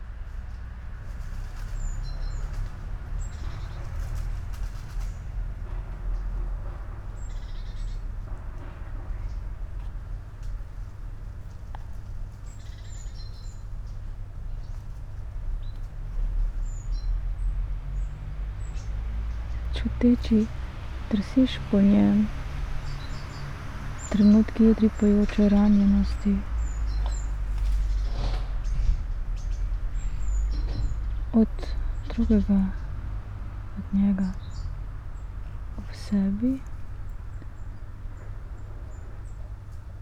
čuteči, drsiš po njem
trenutki utripajoče ranjenosti
od drugega, od njega
ob sebi ...
čuteči drsiš po njem
trenutki utripajoče ranjenosti
od drugega
ob sebi hočeš še bližje
nastavljaš telo besede
razpiraš čas
da dosegaš najtanjše tančice
v besedah
hočeš čutiti samost
v kateri počiva prašno jutro poletnega žvrgolenja
while seated on a stump, birds and tree branches, spoken words
reading poems fragments on silences, written in summer mornings in 2013
Trieste, Italy